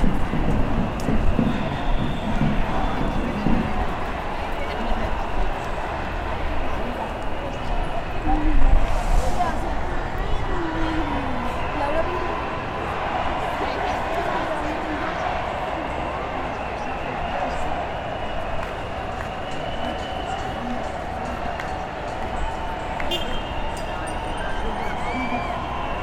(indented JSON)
{
  "title": "Cl., Bogotá, Colombia - Manifestación social Contra Enrique Peñalosa",
  "date": "2019-09-27 14:28:00",
  "description": "En Bogotá la ciudad se moviliza en contra del gobierno de Enrique Peñalosa, la situación de crisis de las universidades públicas, la deficiencia del sistema de transporte.\nZoom H6, Mic XY - 120 Grados",
  "latitude": "4.62",
  "longitude": "-74.07",
  "altitude": "2602",
  "timezone": "America/Bogota"
}